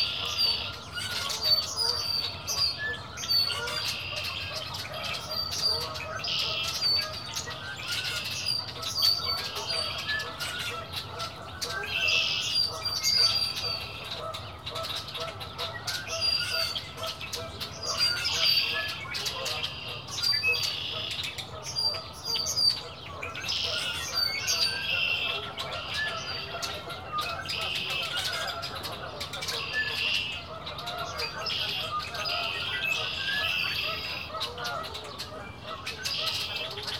Bay Beach Wildlife Sanctuary, East Shore Drive, Green Bay, WI, USA - Bird Frenzy
Wisconsin, United States of America, 5 April 2013, 14:30